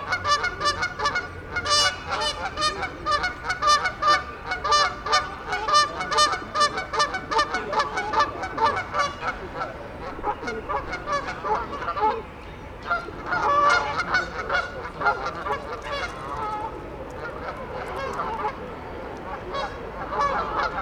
Lac de Saint Mandé
Lac de St Mandé
Belle après-midi du mois de Mars